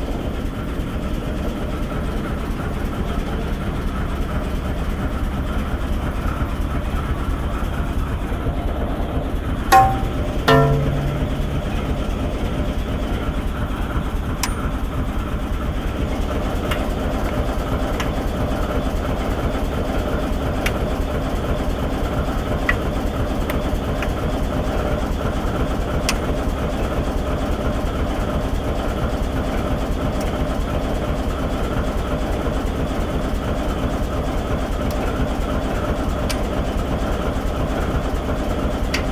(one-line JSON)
{"title": "Severodvinsk, Russia - locomotive", "date": "2013-01-06 18:15:00", "description": "Engine sound of the locomotive.\nrecorded on zoom h4n + roland cs-10em (binaural recording)\nЗвук работающего двигателя локомотива.", "latitude": "64.54", "longitude": "39.77", "altitude": "7", "timezone": "Europe/Moscow"}